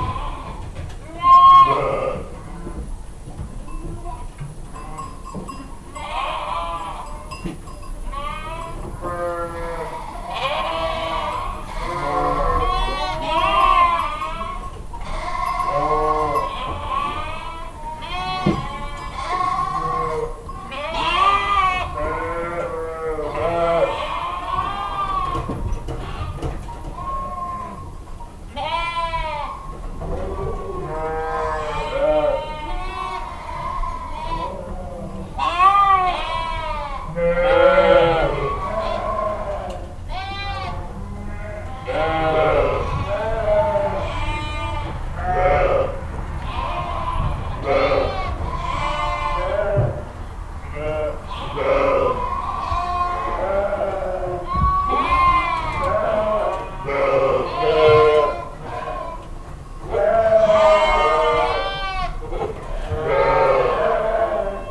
Mas-Saint-Chély, France - 3 o'clock in the barn
It's 3AM. The night is very cold. I'm trying to sleep in the barn. Lambs were recently removed to another cowshed. Alls sheeps are shooting, because of the removed lambs. There's no moment it stops. How do these animals find a breather ?